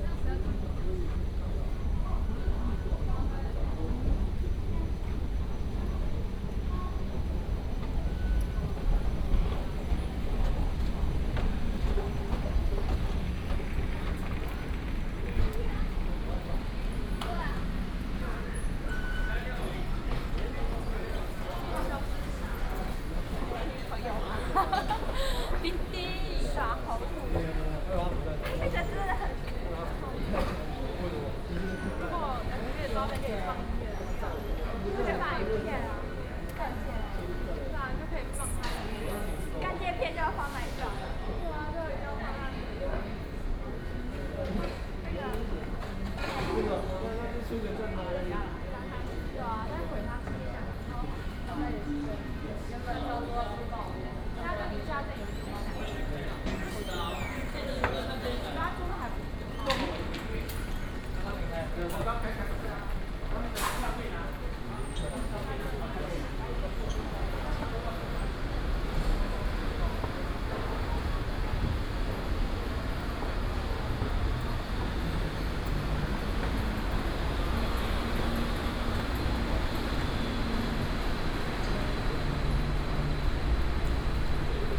Rui’an St., Da’an Dist., Taipei City - walking in the Street
Walking through the small alley, Then went into the MRT station, Traffic Sound